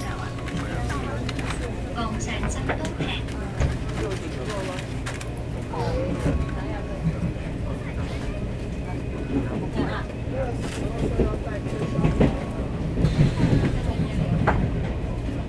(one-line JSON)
{"title": "Gangshan, Kaohsiung - On the train", "date": "2012-02-01 11:34:00", "latitude": "22.81", "longitude": "120.29", "altitude": "8", "timezone": "Asia/Taipei"}